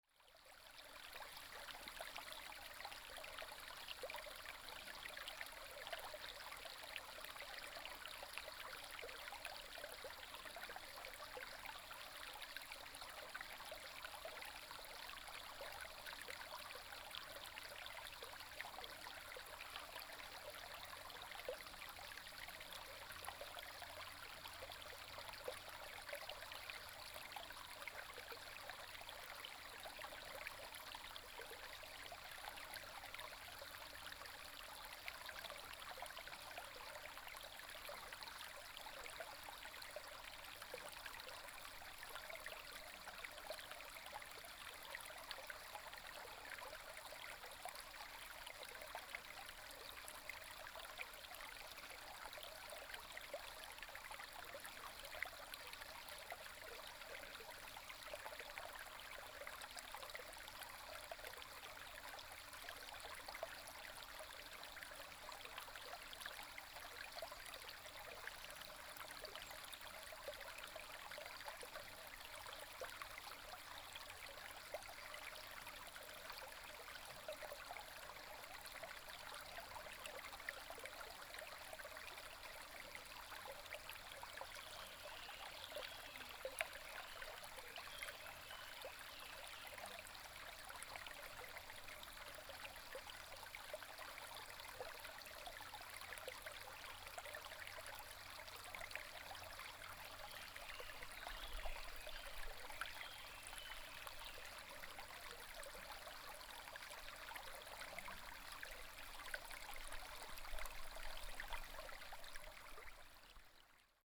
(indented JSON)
{
  "title": "乾溪, 成功里, Puli Township - Stream sound",
  "date": "2016-04-26 12:52:00",
  "description": "Stream sound\nBinaural recordings\nSony PCM D100+ Soundman OKM II",
  "latitude": "23.97",
  "longitude": "120.90",
  "altitude": "484",
  "timezone": "Asia/Taipei"
}